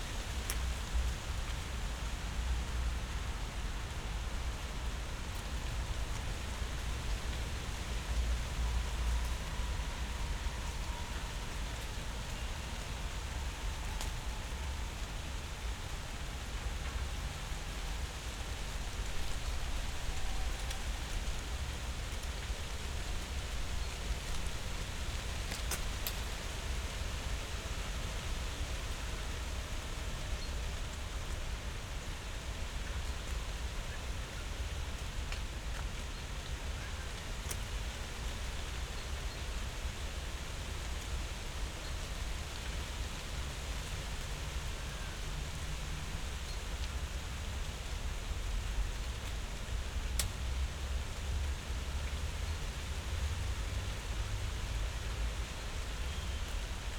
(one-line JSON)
{"title": "Tempelhofer Feld, Berlin, Deutschland - falling leaves", "date": "2012-11-11 14:00:00", "description": "gray autumn day, light breeze in the poplars, some rain drops and leaves are fallig down.\n(SD702, AT BP4025)", "latitude": "52.48", "longitude": "13.40", "altitude": "42", "timezone": "Europe/Berlin"}